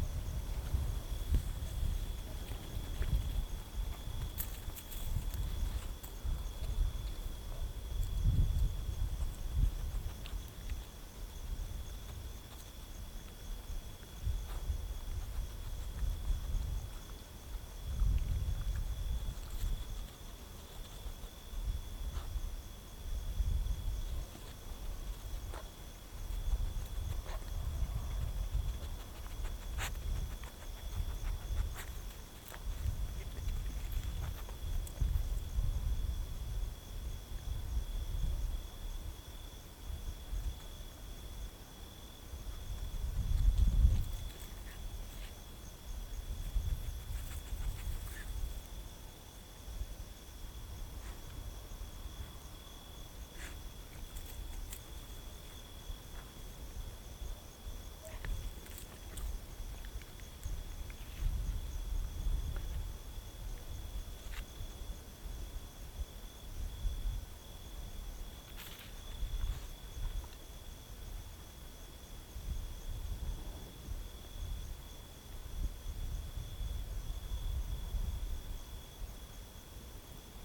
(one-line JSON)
{"title": "Camino de Torrejón de Velasco a Valdemoro, Torrejón de Velasco, Madrid, Spain - Night field recording", "date": "2018-08-02 02:30:00", "description": "Night promenade field recording\nUnpaved gravel road (plus eventual dog)\nZoomh1 + Soundman – OKM II Classic Studio Binaural", "latitude": "40.21", "longitude": "-3.72", "altitude": "614", "timezone": "GMT+1"}